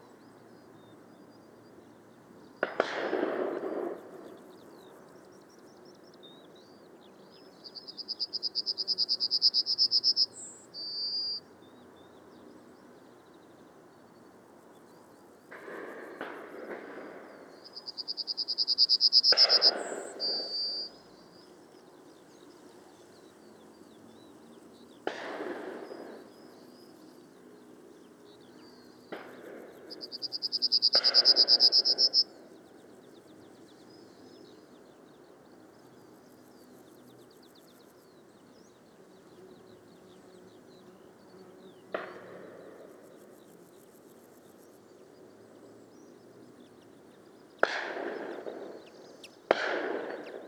Bere Regis, UK - Yellowhammer and shotguns
I went to check out this site as I had been told it had a good Nightjar population. I was greeted by a Marsh Harrier and a lovely male Yellowhammer. The recording also has a Skylark and Linnet in the background. Recorded on my Sony M10 placed directly in a parabolic reflector using the internal mics.